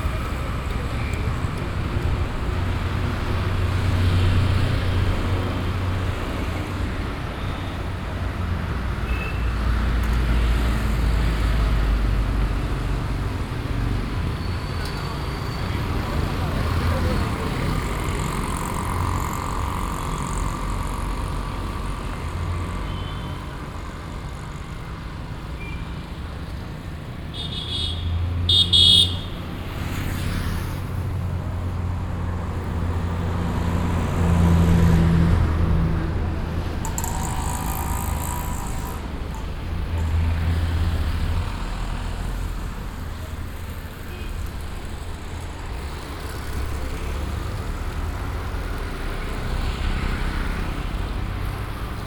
bangalor, karnataka, 24th main street
bangalore traffic noise on a sunday morning - all sorts of cars, busses, lorries, motorbikes and tricycles passing by - hooting every 20 min.
international city scapes - social ambiences and topographic field recordings